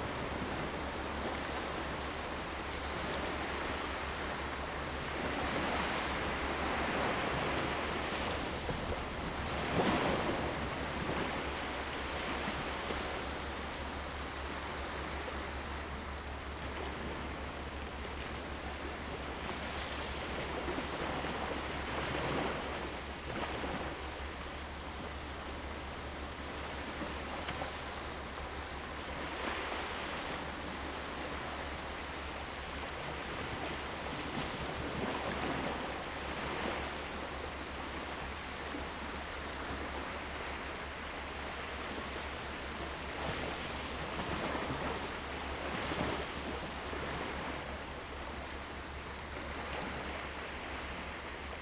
漁福村, Hsiao Liouciou Island - Small beach
At the beach, Sound of the waves
Zoom H2n MS+XY
Liuqiu Township, Pingtung County, Taiwan, 1 November 2014